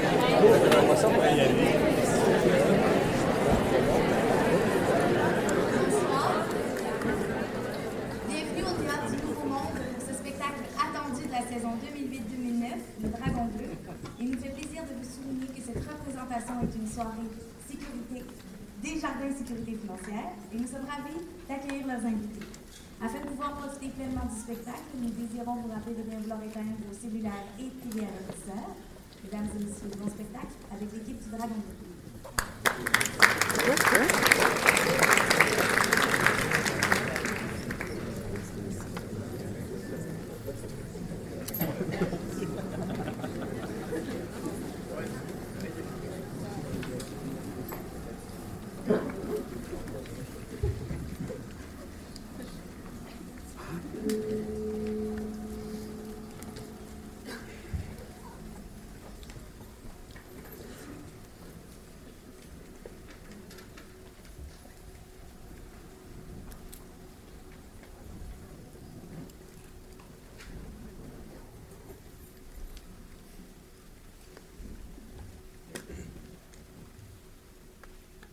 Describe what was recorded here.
equipment used: Ipod Nano with Belkin Interface, Entering the Theatre du Nouveau Monde for a presentation of Le Dragon Bleu by Robert Lepage